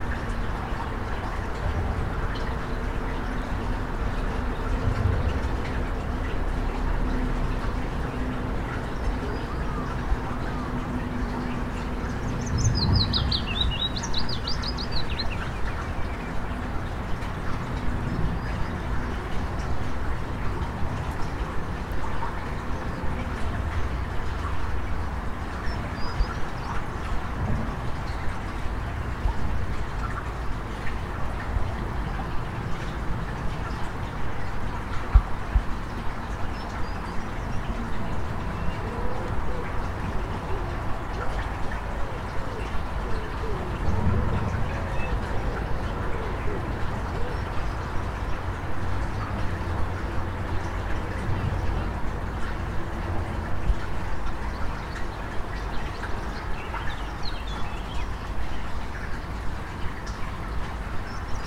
Teatralna, Gorzów Wielkopolski, Polska - Little stream near the Warta river
Little stream near the Warta river.
województwo lubuskie, Polska, 23 April